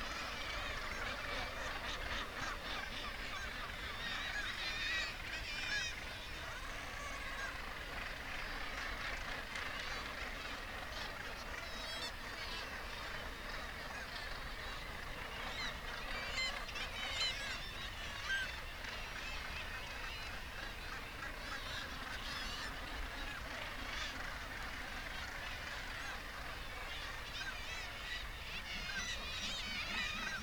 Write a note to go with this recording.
Gannet colony soundscape ... RSPB Bempton Cliffs ... gannet calls and flight calls ... kittiwake calls ... open lavalier mics on T bar on fishing landing net pole ... warm ... sunny morning ...